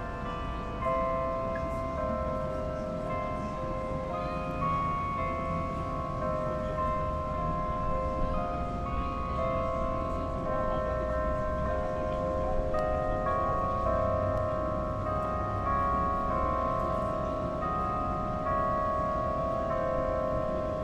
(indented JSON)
{
  "title": "Downtown, Montreal, QC, Canada - WLD 2014 - Square Dorchester - downtown Montreal",
  "date": "2014-07-18 17:00:00",
  "description": "Recording from Square Dorchester, downtown Montreal. Everyday at 5:00 pm. We can ear a recording of Big Ben's carillon and music coming from the Sun life building located in front of the square. It was very windy that day and there are all ways a lot of traffics and peoples around the place.",
  "latitude": "45.50",
  "longitude": "-73.57",
  "altitude": "49",
  "timezone": "America/Montreal"
}